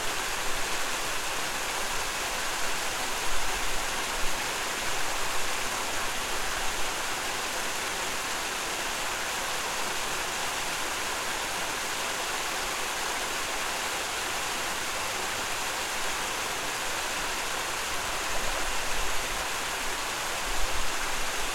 Sounds of water escaping from closed lock gates